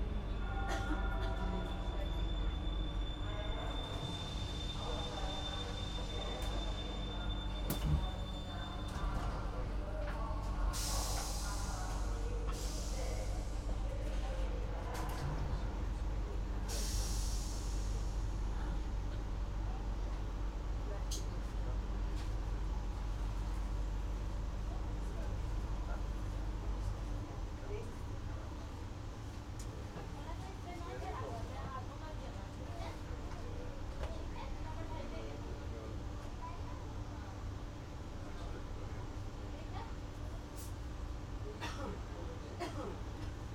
railway train
in the public transport
Deutschland, European Union